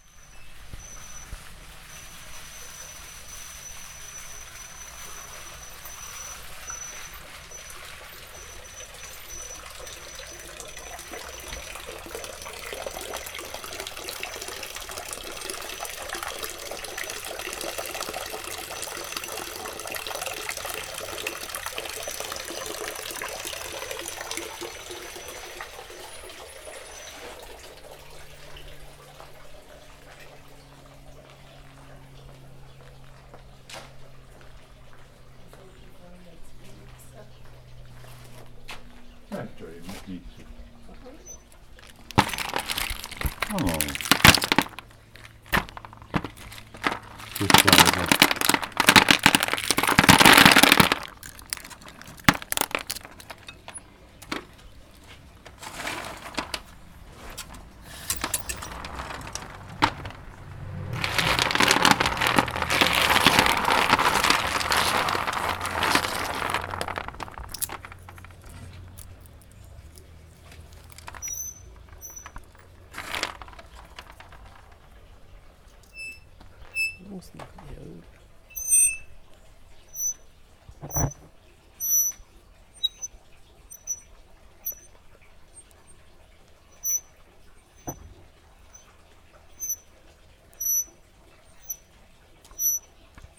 Espace culturel Assens, Nussernte trocknen
Assens, Nüsse trocknen lassen, Brunnengeräusche